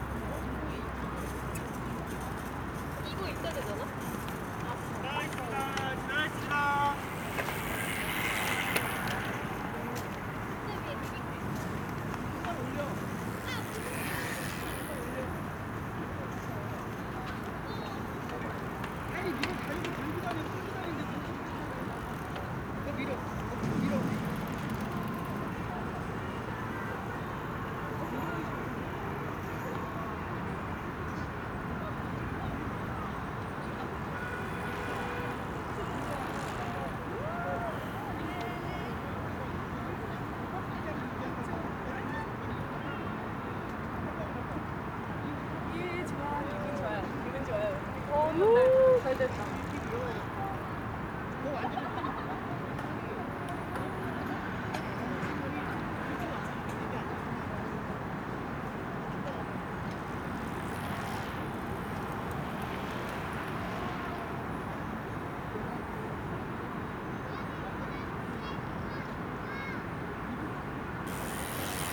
Han river, Banpo Hangang Park, People talking, Riding Bicycle
반포한강공원, 사람들, 자전거